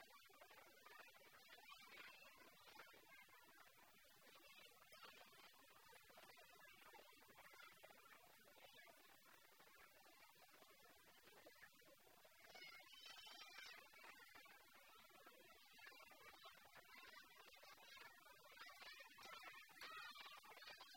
Rambapur, Siva Murati, Playground
India, Karnataka, Bijapur, Siva Murati, Shiva, Playground, children, This 85 feet cement and steel idol at Rambapur village 3Kms from City of Bijapur on the Ukkali road was unveiled on Feb 26th 2006 the auspicious day of Shivarathri. Sculptors from Shimoga toiled for 13 months to create the idol based on the design provided by the civil engineers from Bangalore.